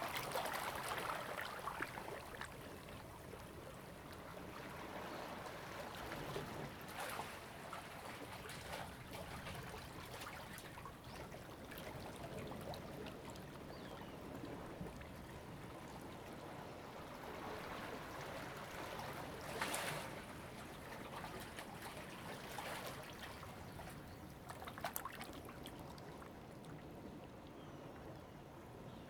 Imowzod, Koto island - Tide
Hiding in the rock cave, Sound of the waves
Zoom H2n MS +XY